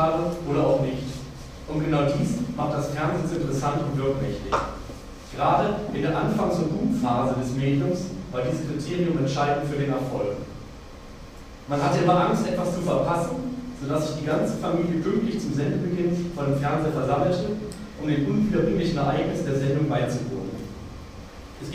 {"title": "Gebäude der HU, Konferenz, Sprache der Dinge, TV", "latitude": "52.51", "longitude": "13.40", "altitude": "40", "timezone": "GMT+1"}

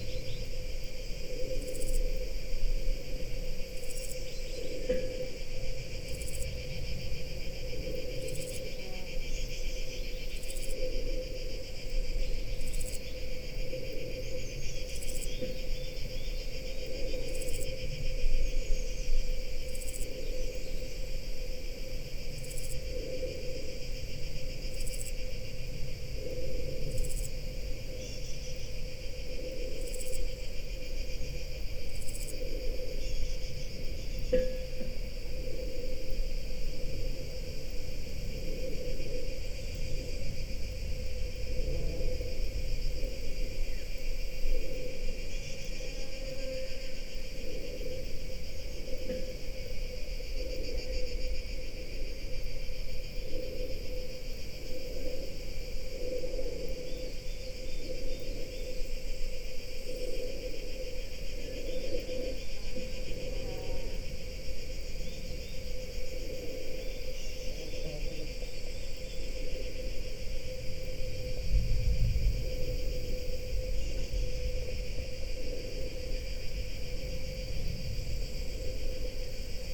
{"title": "quarry, Marušići, Croatia - void voices - stony chambers of exploitation - stone block", "date": "2013-07-19 15:23:00", "description": "sounds of stone, breath, wind, cicadas, distant thunder, broken reflector ...", "latitude": "45.41", "longitude": "13.74", "altitude": "269", "timezone": "Europe/Zagreb"}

